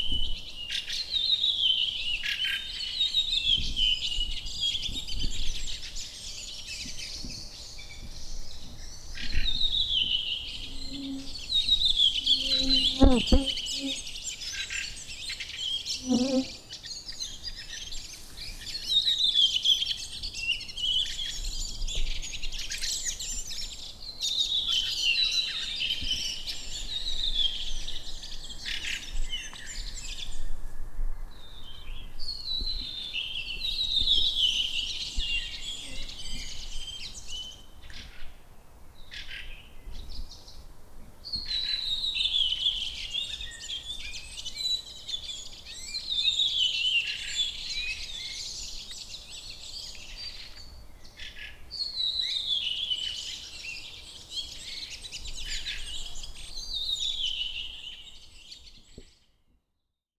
Korkiasaari, Oulu, Finland - Birds of Korkiasaari
Birds singing on a warm evening in Korkiasaari, Oulu. It's almost 11 PM but the sun hasn't gone down yet. A mosquito lands on the microphone and a cyclist rides by. Zoom H5 with default X/Y capsule.
Manner-Suomi, Suomi, 8 June 2020